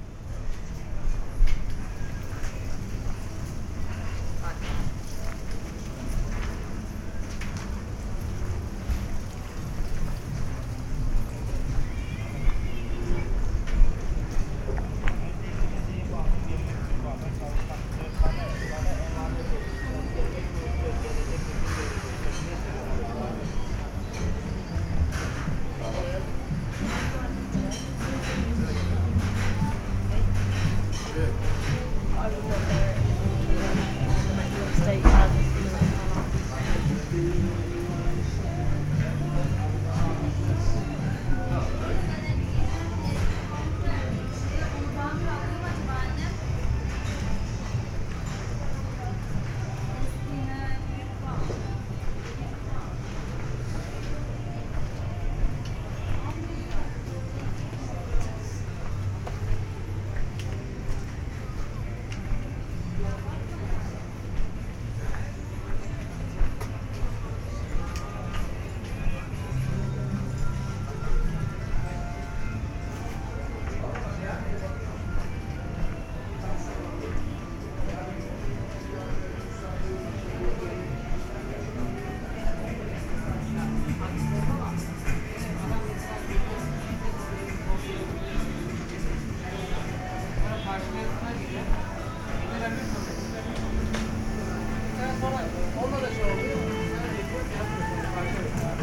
Kalkan, Turkey - 915g walking around main streets
Binaural recording of walk through main streets of Kalkan.
Binaural recording made with DPA 4560 on a Tascam DR 100 MK III.
21 September 2022, 15:00